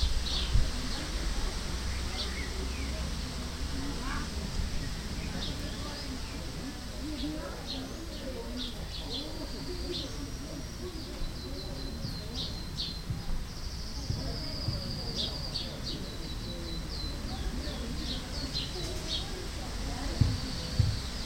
Birds, wind and neighbours chatting in the backyard of my parents house.
Zoom H2 recorder with SP-TFB-2 binaural microphones.
26 May 2012, 16:06